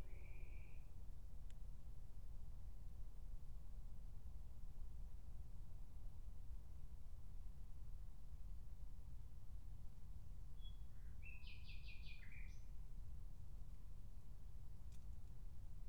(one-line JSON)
{"title": "Berlin, Tempelhofer Feld - former shooting range, ambience", "date": "2020-06-02 03:00:00", "description": "03:00 Berlin, Tempelhofer Feld", "latitude": "52.48", "longitude": "13.40", "altitude": "44", "timezone": "Europe/Berlin"}